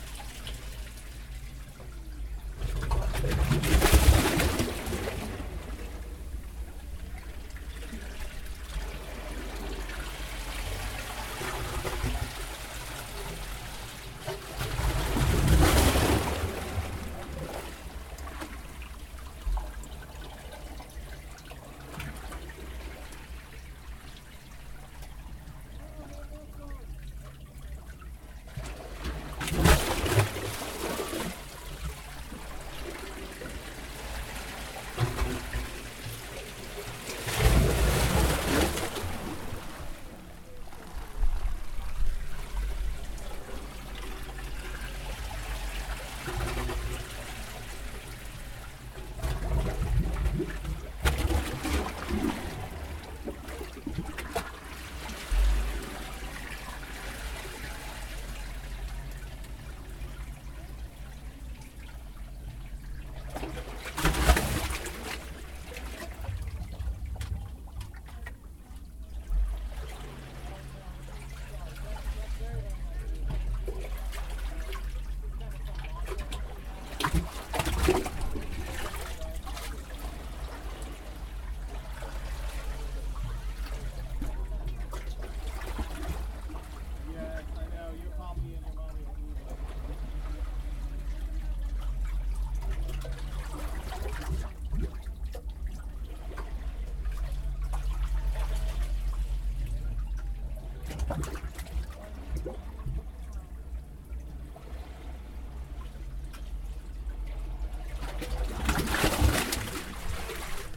Marina District, San Francisco, CA, USA - Kris Wave Organ 2
Wave Organ sounds recorded with a Zoom
13 October